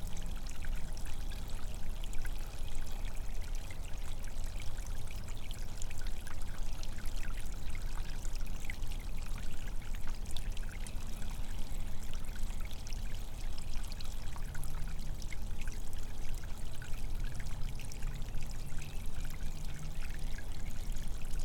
Percy Warner Park, Nashville, Tennessee, USA - Streamlet Percy Warner Park
Recording of streamlet in woods cascading down hillside
Tennessee, United States, 15 March 2022